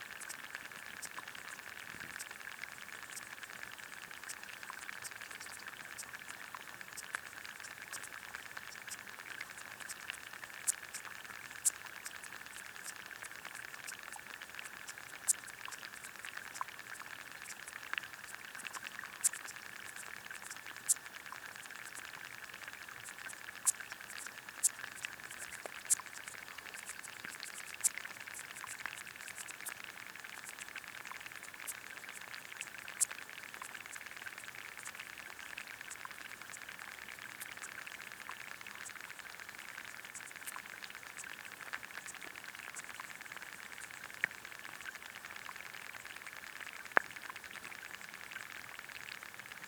Elgar Rd S, Reading, UK - lagoa dos Mansos
hidrophone recording at Lagoa dos Mansos
June 2018